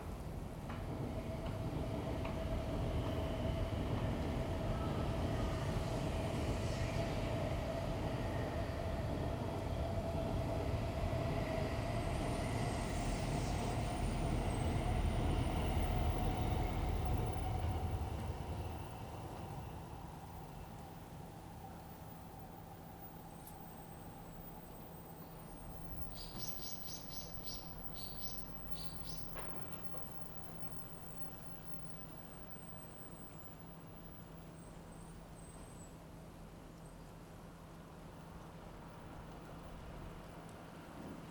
Nishishinagawa, Shinagawa-ku, Tōkyō-to, Japonia - Trainsong
Recorded from my room window. Living approx. 15 meters from railroad tracks, I get greeted everyday by subway trains and shinkansens. Recorded with Zoom H2n
Tōkyō-to, Japan, 10 January 2015